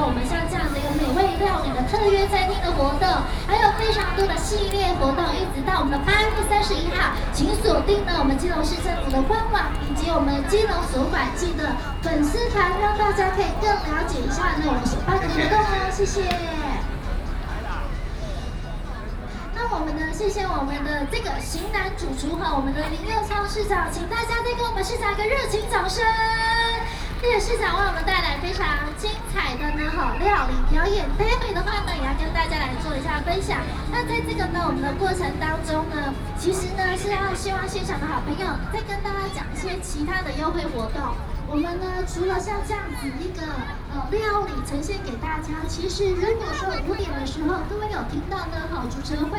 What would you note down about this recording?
In the Plaza, Traffic Sound, Festivals